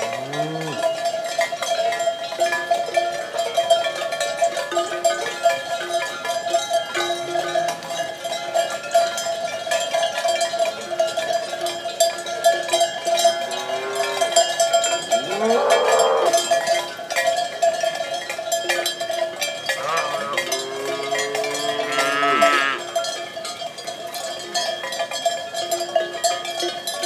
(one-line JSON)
{"title": "SBG, Codina - Vacas", "date": "2011-08-06 12:00:00", "description": "Un grupo de vacas pasta tranquilamente en el campo.", "latitude": "41.97", "longitude": "2.16", "altitude": "756", "timezone": "Europe/Madrid"}